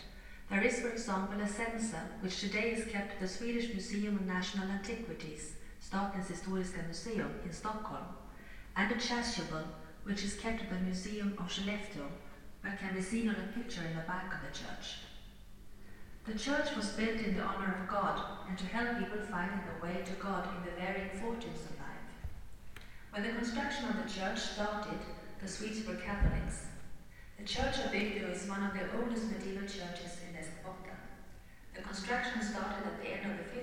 Bygdeå. Kyrka (church)
Bygdea Kyrka visit. Doors, entry, CD-guide tour with varying quality of speakers as you walk down the centre aisle. Doors.